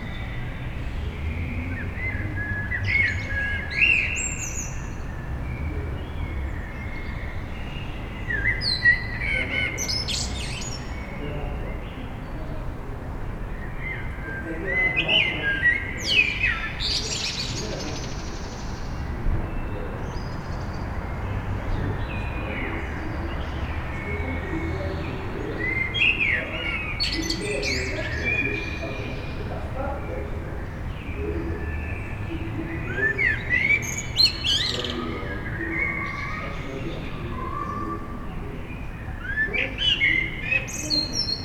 Brussels, Molierelaan, Birds and cats.
Avenue Molière, Des oiseaux et un chat sur la cour intérieure.
Forest, Belgium